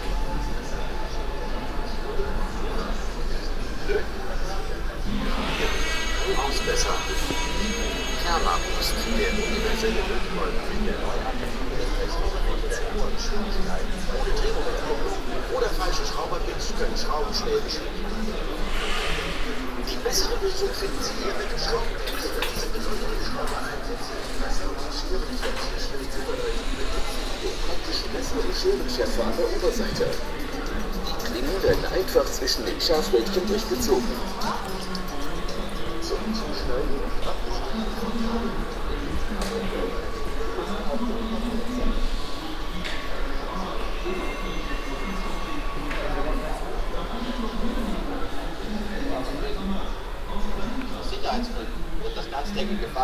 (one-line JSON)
{"title": "cologne, kalk, new construction store - cologne, kalk, new construction store, advertisments", "date": "2010-06-18 19:41:00", "description": "on screen advertisments inside a big store for construction material\nsoundmap nrw - social ambiences and topographic field recordings", "latitude": "50.94", "longitude": "7.00", "altitude": "43", "timezone": "Europe/Berlin"}